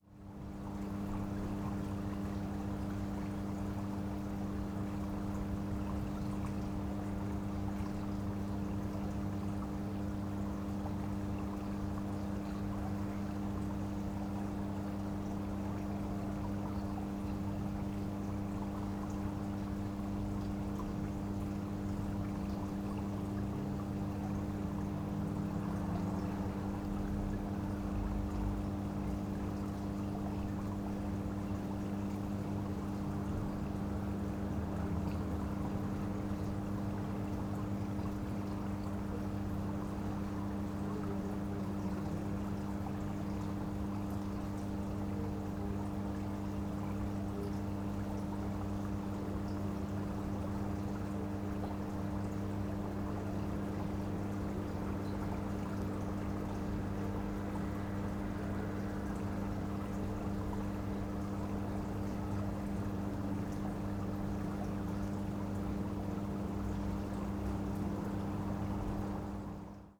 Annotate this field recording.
electric current station hum close to the little creek Tiefenbach, which gave this village its name.